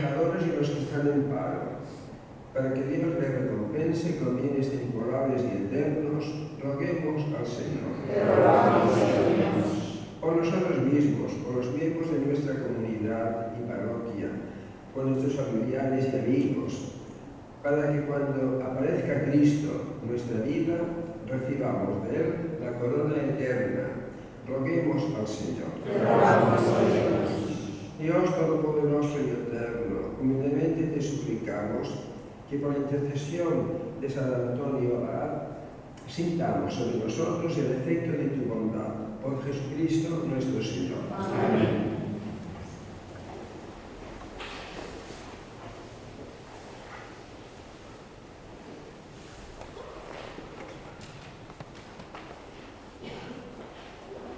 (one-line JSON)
{"title": "Parroquia Nostra señora de Nuria", "date": "2011-01-17 13:04:00", "description": "Eucharistic celebration of the Roman Catholic Church on a conventional monday.", "latitude": "41.39", "longitude": "2.15", "altitude": "68", "timezone": "Europe/Madrid"}